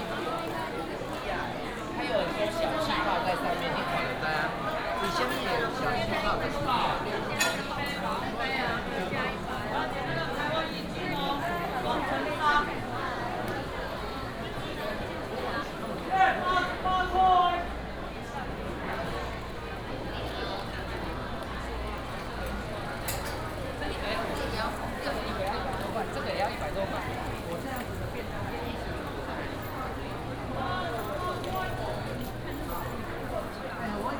Xiangshang Market, West Dist., Taichung City - Walking through the market
Walking through the market, Traffic sound, The vendor sells sound
West District, Taichung City, Taiwan, 22 March 2017